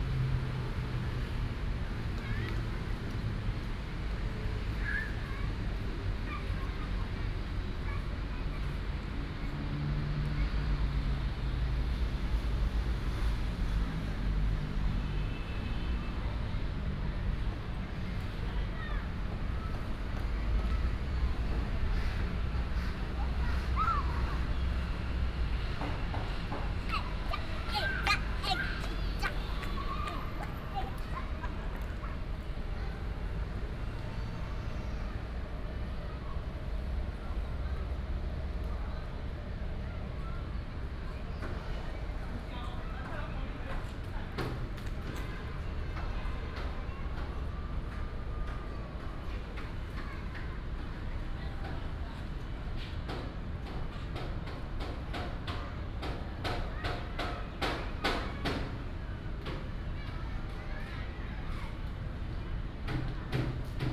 新竹綠園道, Hsinchu City - Childrens play area
in the park, Dog sound, Childrens play area, traffic sound, Construction sound, Binaural recordings, Sony PCM D100+ Soundman OKM II
Hsinchu City, Taiwan, 27 September 2017